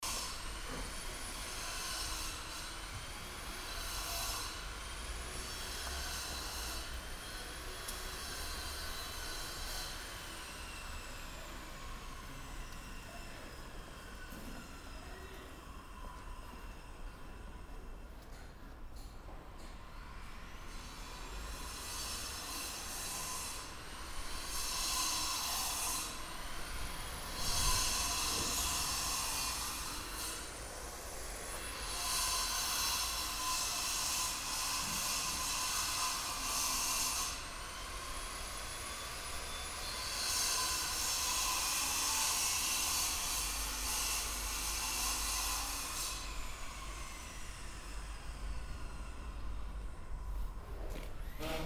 recorded june 4, 2008. - project: "hasenbrot - a private sound diary"